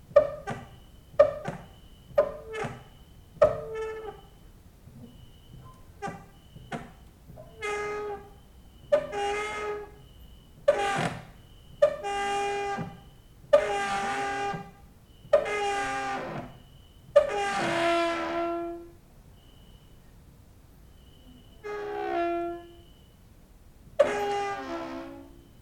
Mladinska, Maribor, Slovenia - late night creaky lullaby for cricket/11/part 2
cricket outside, exercising creaking with wooden doors inside